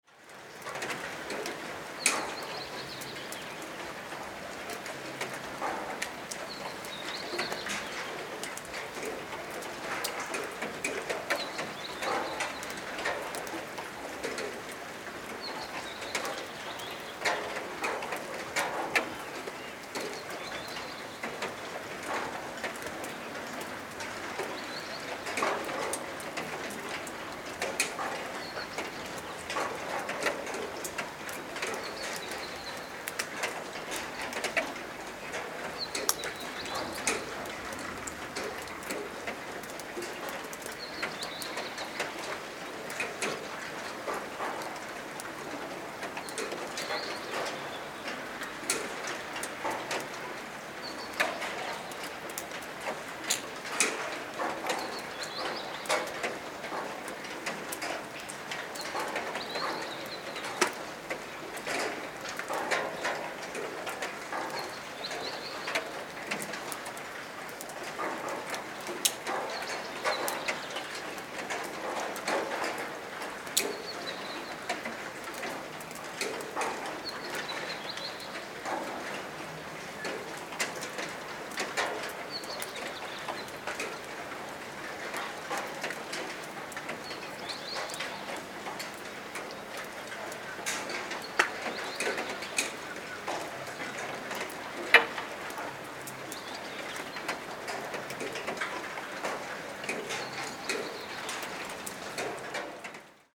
rain: Severodvinsk, Russia - rain
Ночной дождь, капли стучат по карнизам окон.
Night rain drops tapping on the ledges of windows.
Recorded on Oktava MK-012 stereo set + SD MixPre-D + Tascam DR-100 mkII
Severodvinsk, Arkhangelsk Oblast, Russia